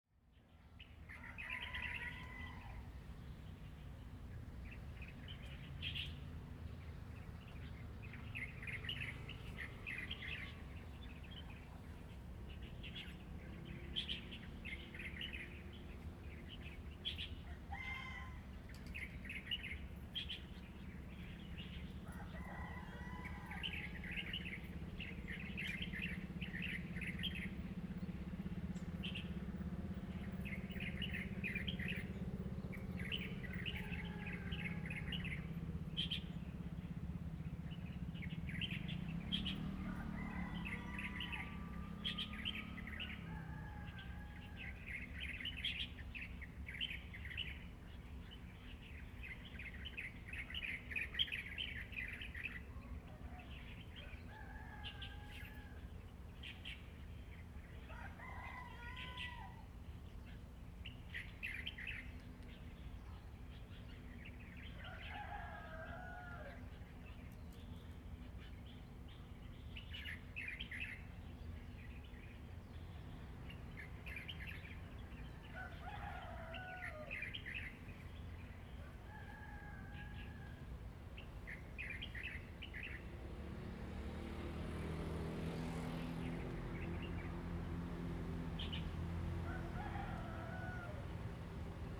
本福村, Hsiao Liouciou Island - Birds singing and Chicken sounds
Birds singing, Chicken sounds
Zoom H2n MS+XY
Pingtung County, Taiwan